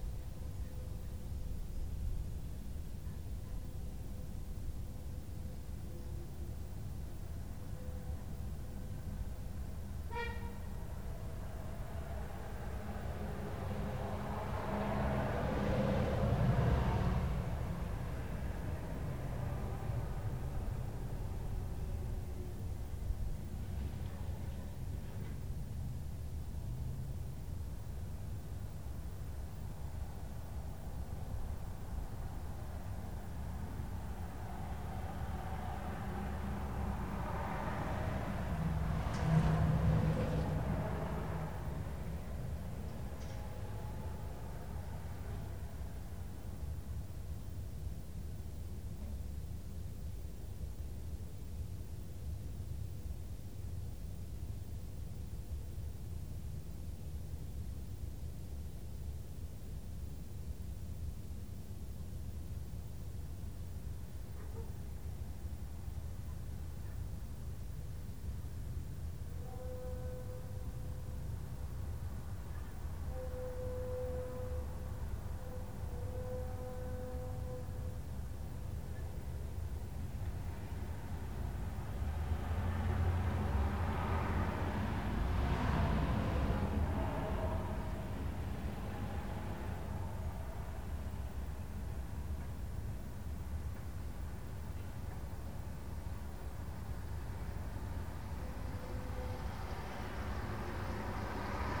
California Ave SW, Seattle - 1520 Calif. Ave SW #2
A quiet evening in West Seattle, overlooking Elliott Bay toward downtown. The sounds of human traffic are reflected off the concrete wall surrounding the parking lot beneath my deck, creating moiré patterns in sound. A multitude of sources overlap and blend in surprising ways.
This was my first phonographic "field recording, " taken off the deck of my West Seattle apartment with my then-new Nakamichi 550 portable cassette recorder. Twenty years later it became the first in a series of Anode Urban Soundscapes, when I traded in the Nak for a Sony MZ-R30 digital MiniDisc recorder and returned to being out standing in the field. The idea came directly from Luc Ferrari's "Presque Rien" (1970).
Major elements:
* Car, truck and bus traffic
* Prop and jet aircraft from Sea-Tac and Boeing airfields
* Train horns from Harbor Island (1 mile east)
* Ferry horns from the Vashon-Fauntleroy ferry (4 miles south)